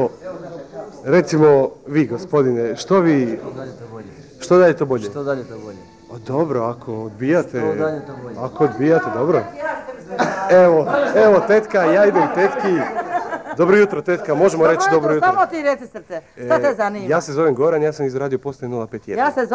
Rijeka, Croatia, Archive - Radio 051 Interview, Zabica - Praha
Radio 051 interviews in the streets of Rijeka in 1994.
Interviews was recorded and conducted by Goggy Walker, cassette tape was digitising by Robert Merlak. Editing and location input by Damir Kustić.
February 1994